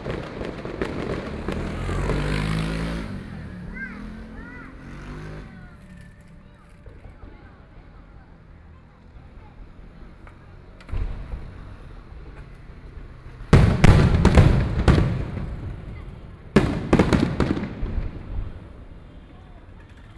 Lishan St., Taipei City - Distance came the sound of fireworks
Distance came the sound of fireworks, Traffic Sound
Please turn up the volume a little. Binaural recordings, Sony PCM D100+ Soundman OKM II
Taipei City, Taiwan, 12 April 2014